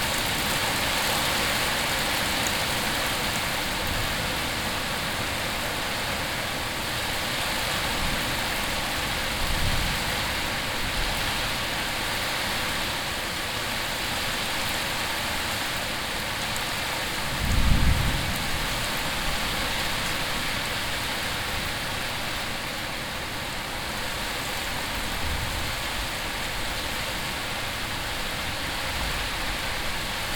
aubignan, rain and thunderstorm
After a hot summer week an evening thunderstorm with heavy rain. The sound of the clashing rain and thunder echoing in the valley. Recording 01 of two
topographic field recordings - international ambiences and scapes